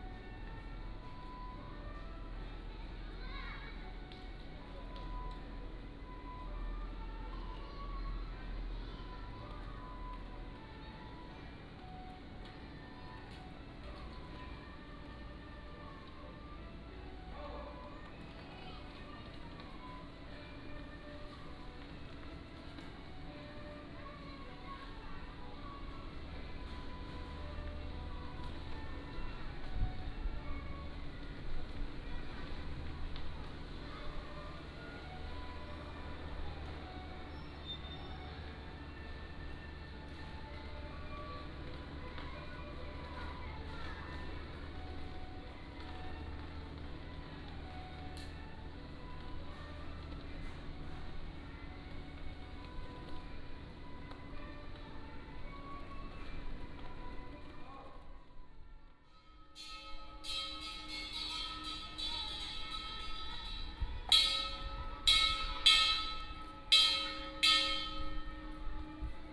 烏崁里, Magong City - In the temple
In the temple, Small village, Traffic Sound
23 October 2014, 17:32